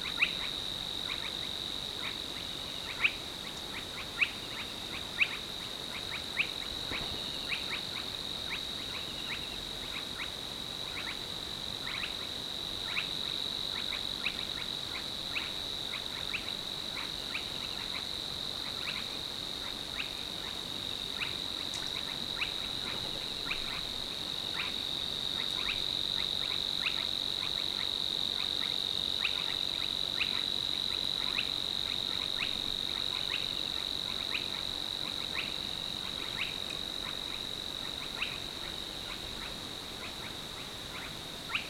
Penijõe River, Matsalu, Estonia. Nightbirds.
On the river with boat. Spotted crakes, Savis warbler, bats.